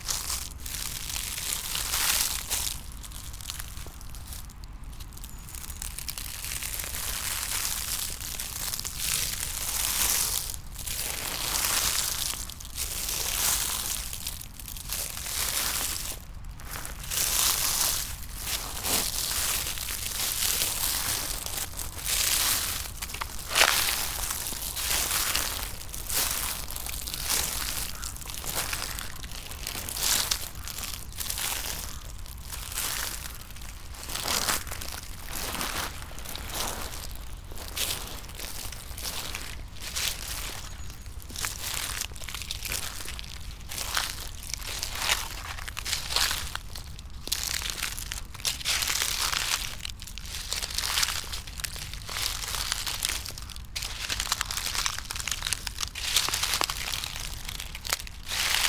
river Drava, Dvorjane - dry leaves, willow, poplar, walking